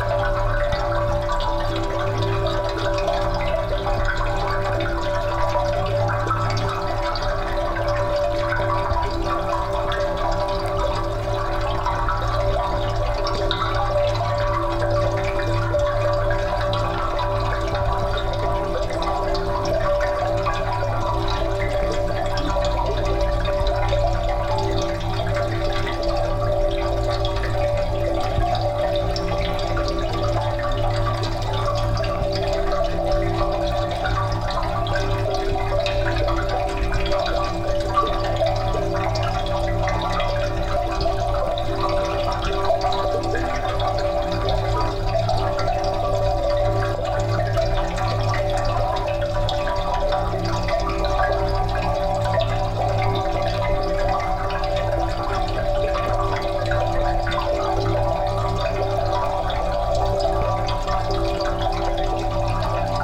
Al Quoz - Dubai - United Arab Emirates - Fountain in the Court Yard (contact Microphone)
Small fountain in the middle of the complex known as the "Court Yard". Recorded using a Zoom H4 and Cold Gold contact microphone. "Tracing The Chora" was a sound walk around the industrial zone of mid-Dubai.
16 January 2016